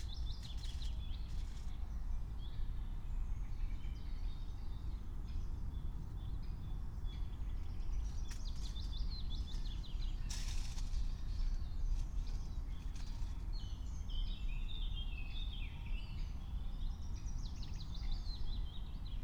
08:59 Berlin, Königsheide, Teich - pond ambience
1 May 2022, ~09:00